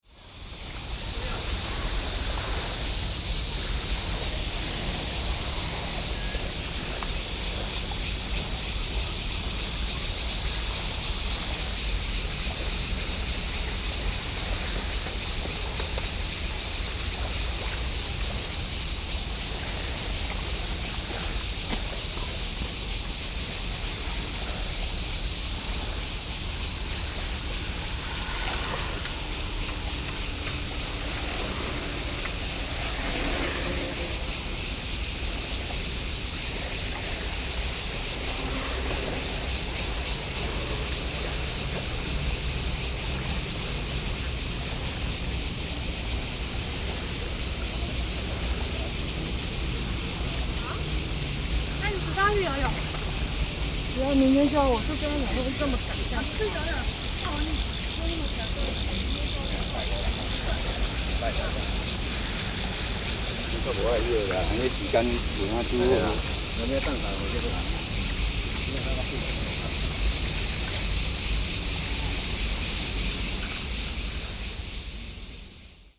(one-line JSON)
{"title": "Wugu Dist., New Taipei City, Taiwan - In the bike lane", "date": "2012-07-06 18:17:00", "description": "In the bike lane, Cicada sounds, Bicycle\nZoom H4n+ Rode NT4", "latitude": "25.11", "longitude": "121.46", "timezone": "Asia/Taipei"}